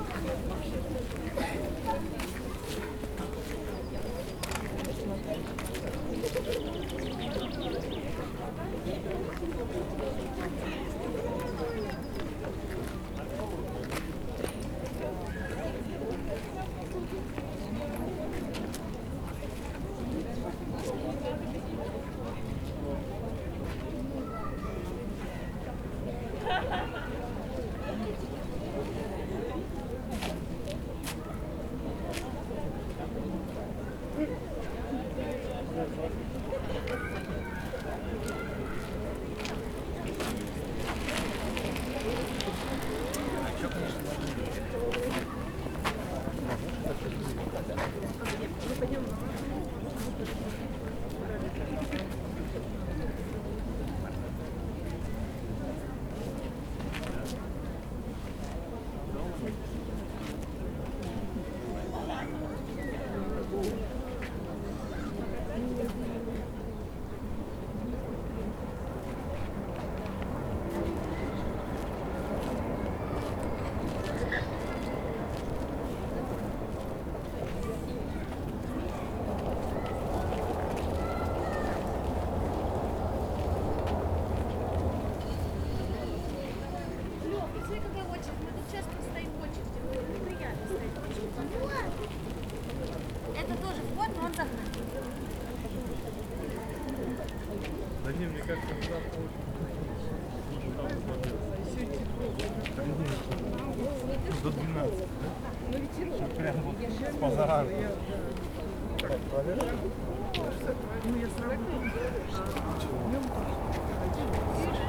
Cherry blossoms in the Japanese garden. May 6, 2022. The entry was made in front of the entrance to the garden.
Центральный федеральный округ, Россия